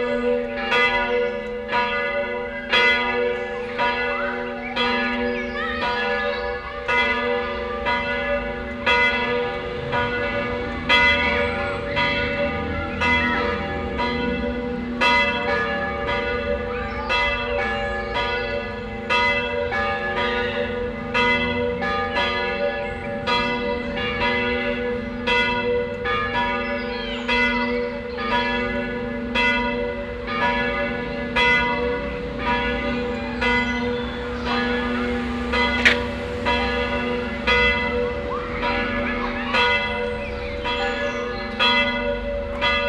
Stoppenberg, Essen, Deutschland - essen, stooenberg, st. nikolaus church, bells
An der St, Nikolaus Kirche. Die 12 Uhr Stundenglocke und anschließend das lange 12 Uhr Geläut gepaart mit den Glocken der unweiten Thomaskirche. Gegen Ende Anfahrt und Parken eines getunten Pkw's.
At the St. Nikolaus Church. The sound of the 12 0 clock hour bell plus the bells of the nearby Thomas church. At the end he sound of a tuned car driving close and parking.
Projekt - Stadtklang//: Hörorte - topographic field recordings and social ambiences
Essen, Germany, 29 April 2014, 12:00